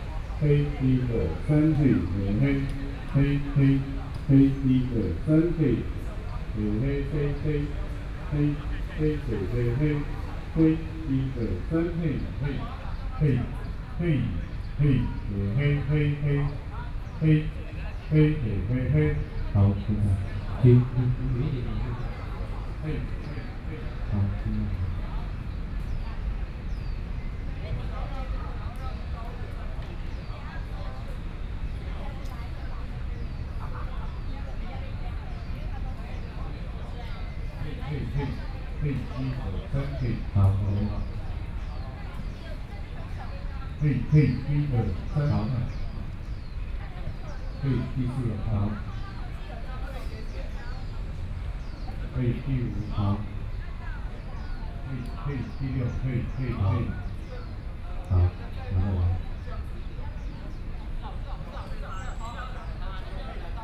Chiang Kai-Shek Memorial Hall - Sound Test
Sound Test, Sony PCM D50 + Soundman OKM II
18 August 2013, 16:32